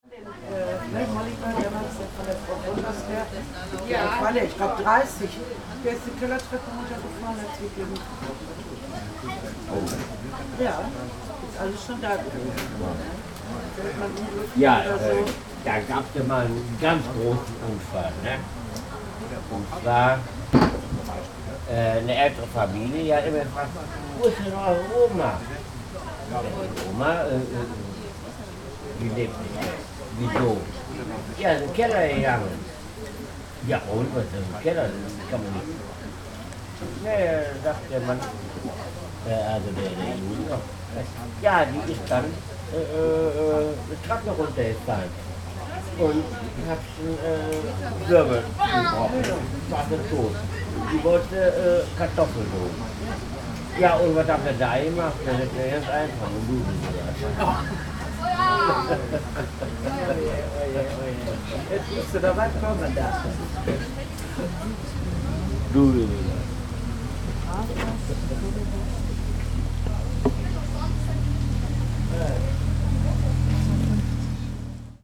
Altenberg - Gespräch am Kiosk / talk at the kiosk
a woman talks about a tragic accident, an old man responds with a joke.
Odenthal, Germany, 20 September 2009